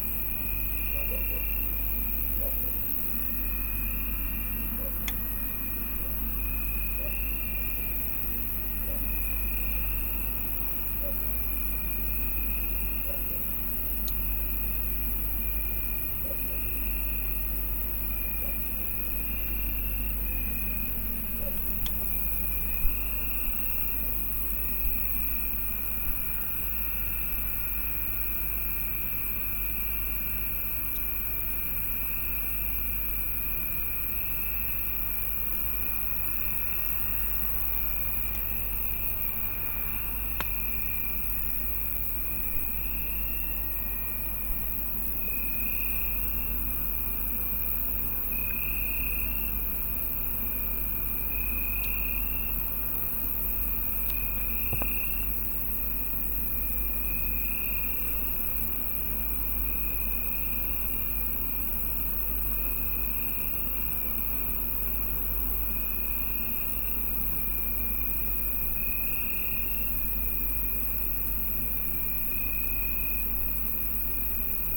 Sveti Ivan Dol, Buzet, Chorwacja - evening near brewary
evening ambience on a porch of a small house located near a brewery. the constant high pitched sound is sound of the brewery complex. (roland r-07)
Istarska županija, Hrvatska, September 2021